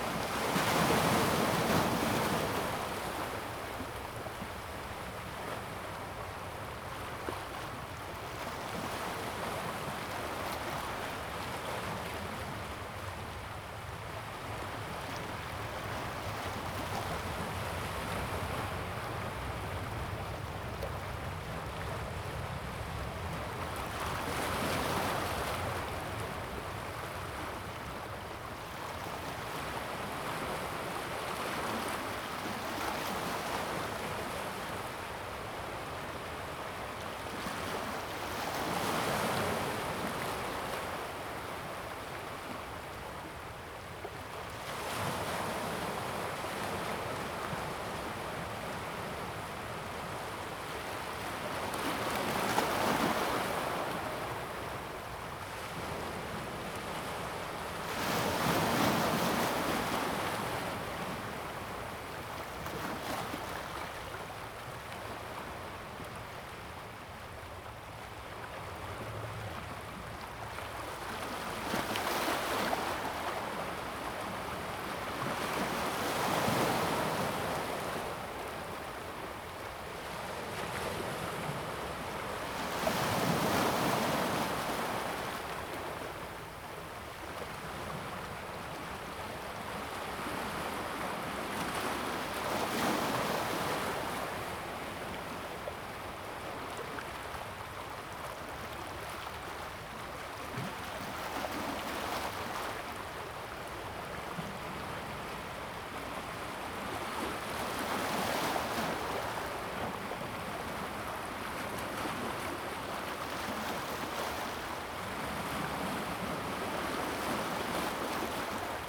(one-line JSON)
{"title": "大屯溪, Tamsui Dist., New Taipei City - Stream to the sea", "date": "2016-11-21 16:24:00", "description": "On the coast, Sound of the waves, Stream to the sea\nZoom H2n MS+XY", "latitude": "25.24", "longitude": "121.45", "timezone": "Asia/Taipei"}